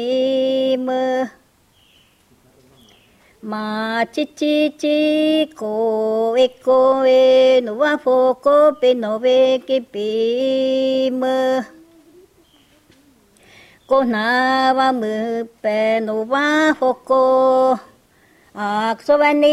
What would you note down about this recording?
Song in the Resígaro language. Traditionally, this song is sung in a duo during the preparation of a big festival when the singers offer cahuana drink